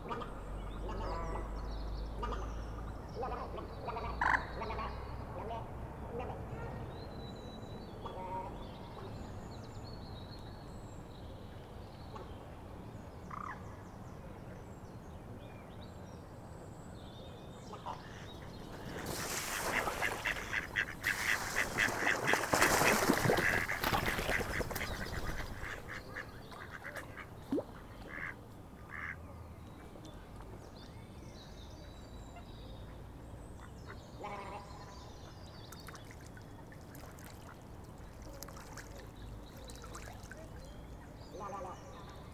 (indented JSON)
{
  "title": "Atlantic Pond, Ballintemple, Cork, Ireland - Duck Dispute",
  "date": "2020-04-27 21:00:00",
  "description": "I placed my recorder on the edge of the pond, facing the Island, and sat far enough away from it that the birds wouldn't be discouraged by my presence. The reverb is lovely on this side of the pond. There's some nice Moorhen and Egret sounds. A group of ducks had a spectacular fight. Two drakes hung around right next to the recorder afterwards and I was holding my breath hoping they wouldn't knock it in to the pond (which to my relief they didn't).\nRecorded with a Roland R-07.",
  "latitude": "51.90",
  "longitude": "-8.43",
  "altitude": "3",
  "timezone": "Europe/Dublin"
}